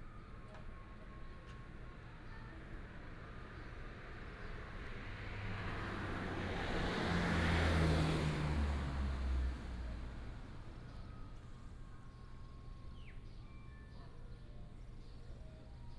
金倫門市, Jinlun, Taimali Township - Small village
At the convenience store, Garbage truck, Bird call, Small village
Binaural recordings, Sony PCM D100+ Soundman OKM II